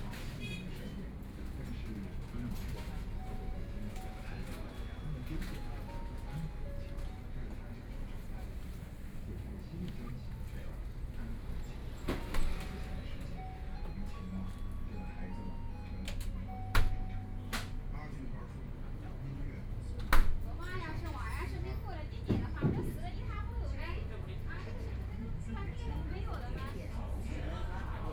國定路, Shanghai - Convenience store
In convenience stores, Corner, walking in the Street, traffic sound, Binaural recording, Zoom H6+ Soundman OKM II
20 November, ~21:00